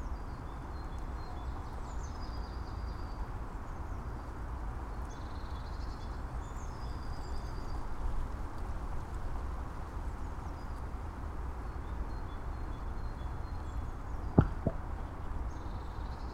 two trees, piramida - creaking-mic on pine tree
creaking while ear (mic) was touching pine trunk